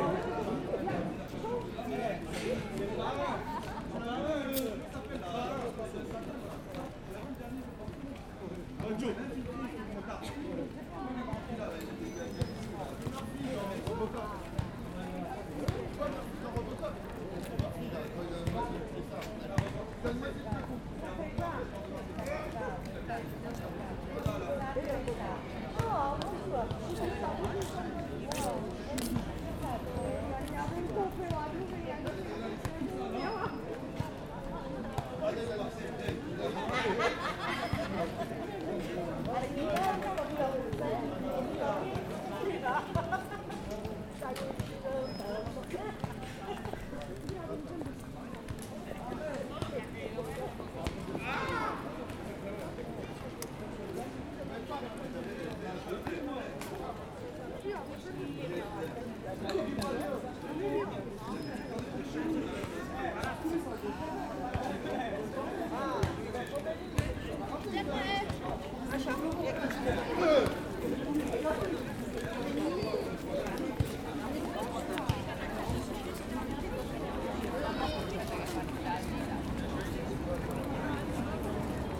Montmartre, Paris, France - Place des Abbesses
Place des Abbesses, Paris.
Sounds from the street: groups of tourists passing by and a group of young adults and kids playing football. Bell sounds from the Église Saint-Jean-de-Montmartre.